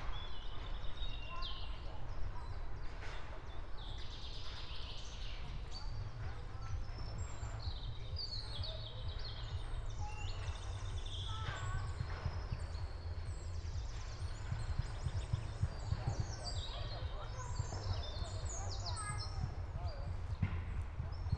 the nearby camping awakens, people rise tents and fix their lodges. forest ambience, frequently disrupted by aircrafts departing from Berlin Schönefeld airport.
(SD702, NT1A)
Berlin, Germany, March 2014